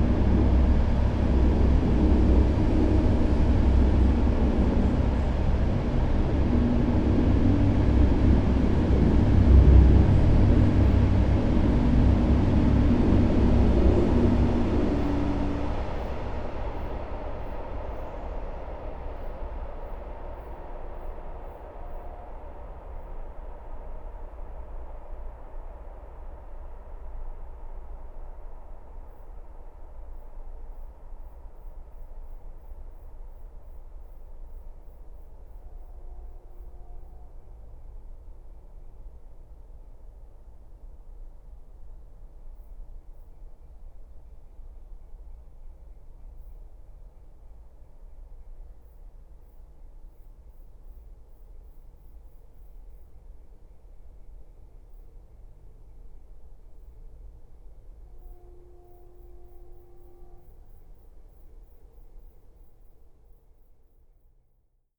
Poznan, Naramowice distruct, Rubiez, viaduct - tunel in the viaduct
pedestrian tunel in the viaduct over Rubiez street. Only freight trains use the viaduct. They normally travel slow due to their weight. The recording was made inside the tunel so the passing train sound is muffled. You can hear it's horn even after almost a minute after it passed the viaduct. (roland r-07)
wielkopolskie, Polska, 18 July 2019